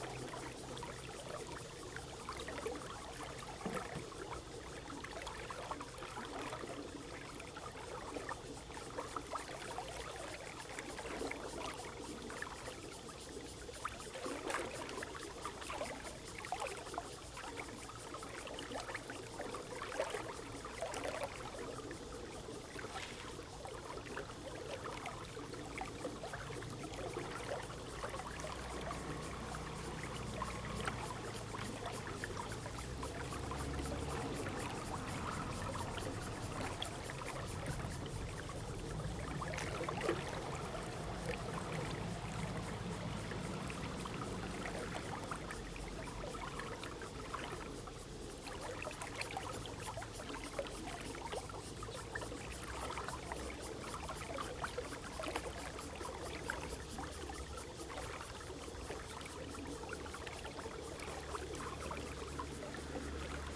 Irrigation waterway, Traffic Sound, The sound of water, Very hot weather
Zoom H2n MS+ XY